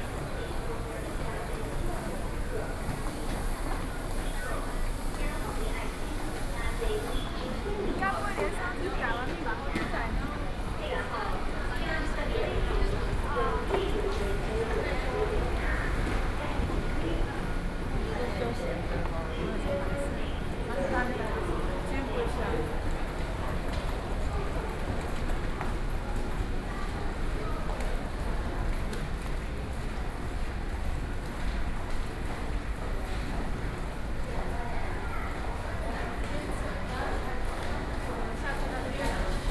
Zhongxiao Fuxing Station, Taipei city - Zhongxiao Fuxing Station
soundwalk in the Zhongxiao Fuxing Station, Sony PCM D50 + Soundman OKM II
信義區, 台北市 (Taipei City), 中華民國, 9 July 2013